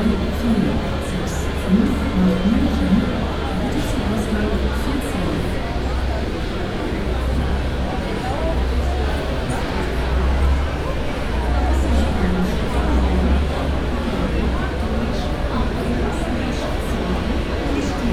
Madeira, Aeroporto da Madeira - boarding crowd
(binaural) waiting my for boarding turn in a huge crowd. three planes starting at the same time and the terminal is packed, noisy and humid.
9 May 2015, ~17:00